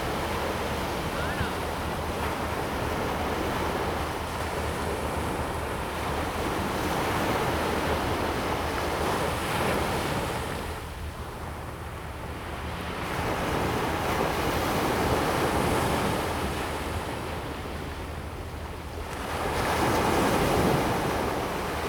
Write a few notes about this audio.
On the beach, Sound of the waves, Zoom H2n MS+XY